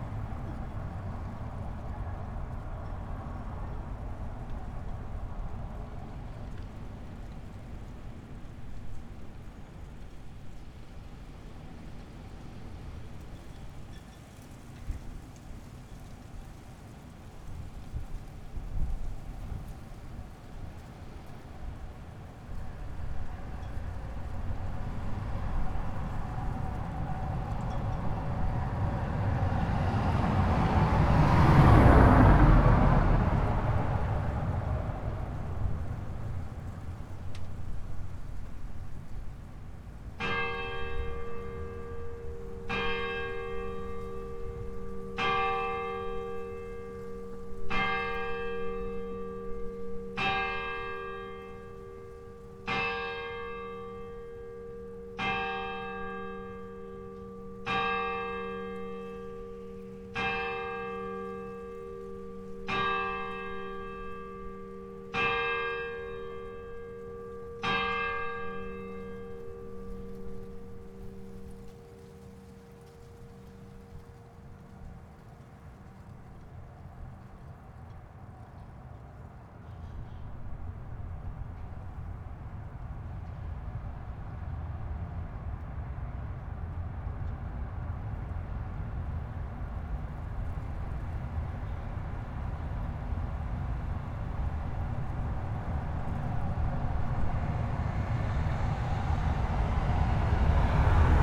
bad freienwalde/oder: uchtenhagenstraße - the city, the country & me: street ambience
mic in the window, street ambience, rustling leaves, church bells
the city, the country & me: january 4, 2015
Bad Freienwalde (Oder), Germany, 4 January 2015, 12:11